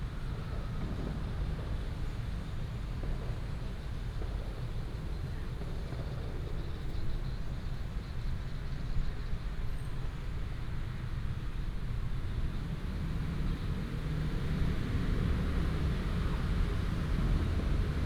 同心園, Miaoli City, Miaoli County - in the Park
Traffic sound, Bird call, The train runs through, Dog sounds